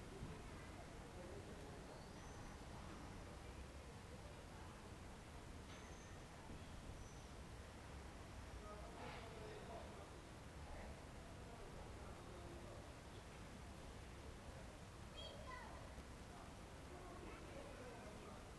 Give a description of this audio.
"Afternoon with building-yard noise in the time of COVID19" Soundscape, Chapter XCIV of Ascolto il tuo cuore, città. I listen to your heart, city. Monday, June 1st 2020. Fixed position on an internal terrace at San Salvario district Turin, eighty-three days after (but day twenty-nine of Phase II and day sixteen of Phase IIB and day ten of Phase IIC) of emergency disposition due to the epidemic of COVID19. Start at 9:34 a.m. end at 10:14 a.m. duration of recording 39’50”.